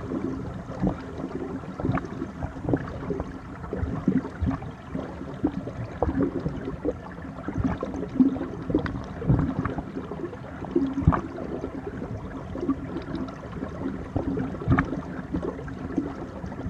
Lithuania, Utena, churning waters under the ice
just placed mic on the frozen river